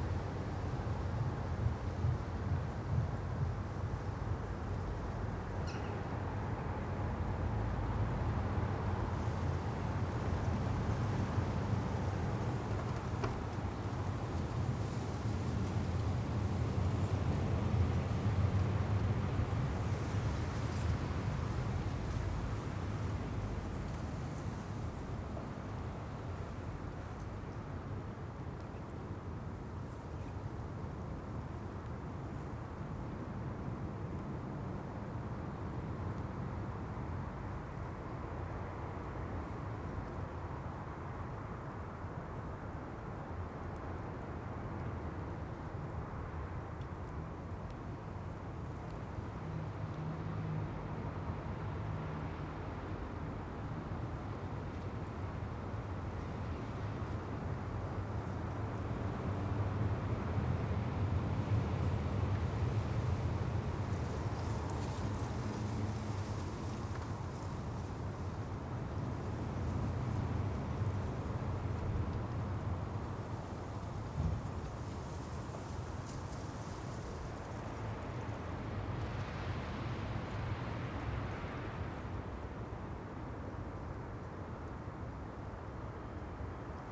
Contención Island Day 35 inner west - Walking to the sounds of Contención Island Day 35 Monday February 8th
The Poplars
East wind
blowing snow
what is traffic noise
what is the wind
A tracery of dead ivy
laces the tree trunks
The wall pillar
leans out at an angle
North East England, England, United Kingdom, 8 February 2021